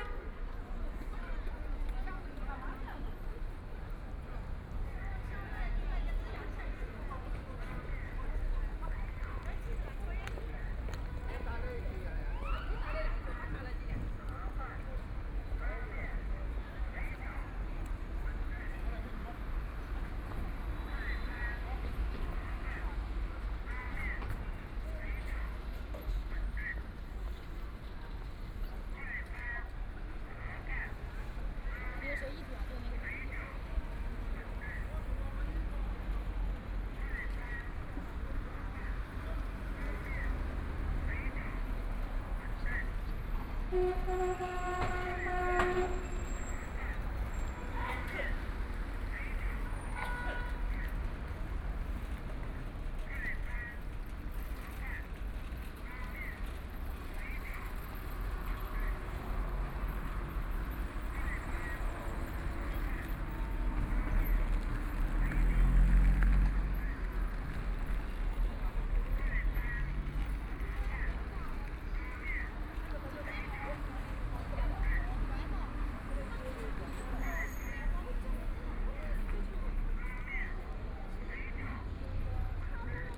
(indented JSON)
{
  "title": "Shanghai Railway Station - In the train station plaza",
  "date": "2013-11-23 13:31:00",
  "description": "In the train station plaza, Store ads sound, Traffic Sound, The crowd, Binaural recording, Zoom H6+ Soundman OKM II",
  "latitude": "31.25",
  "longitude": "121.45",
  "altitude": "11",
  "timezone": "Asia/Shanghai"
}